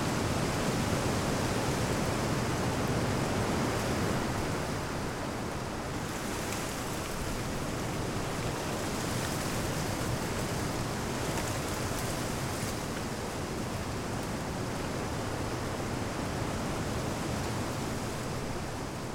Ploumanach, France - Heavy wind into trees branchs
Sur le chemin du phare de Ploumanac'h, début de la nuit, beaucoup de vent dans les arbres, toute les branchent bougent.
On the lighthouse path, after sunset, a lot of wind on the trees makes branchs movent.
/Oktava mk012 ORTF & SD mixpre & Zoom h4n